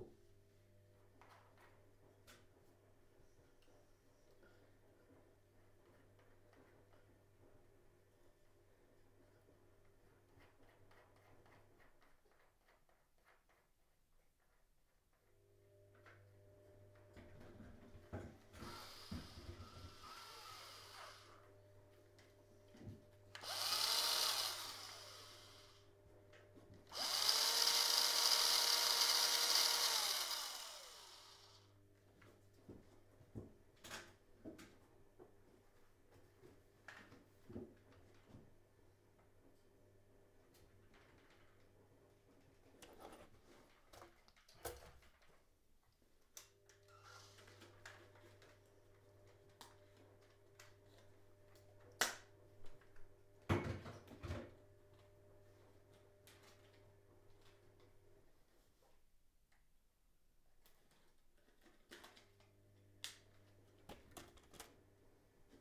My Dining Room, Reading, UK - DIY and washing machine
We have recently been redecorating our dining room to make more space for our work things and to make it a calmer colour. I wanted us to put up a lot of shelves and the only way to mount the batons is to drill through the old plaster into the brick walls; the bricks are very strong and so we need to use the hammer drill to get into them. In this recording you can hear Mark and then me doing stints of drilling, getting up and down off the ladder, and picking screws and rawlplugs off the mantelpiece. In the background, our washing machine is churning away. A very productive morning.